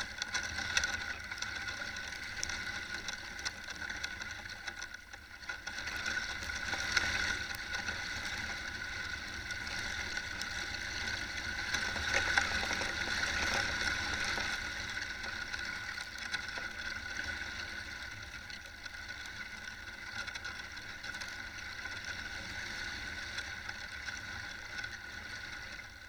Utena, Lithuania, dried leaves - dried leaves in wind
contact microphone attached to the young oak tree - listen how vibration from dried leaves in wind comes through the branches